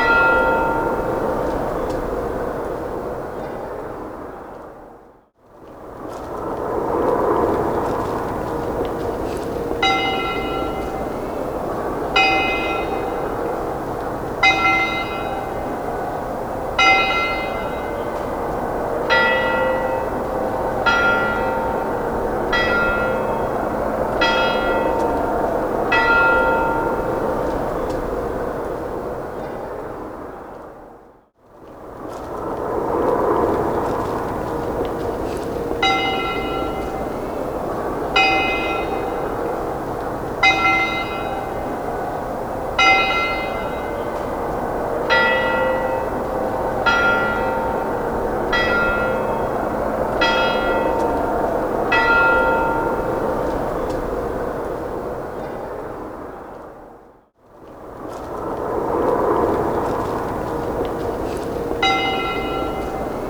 Sv. Kliment
Bells that ring to tell the time every 15 min.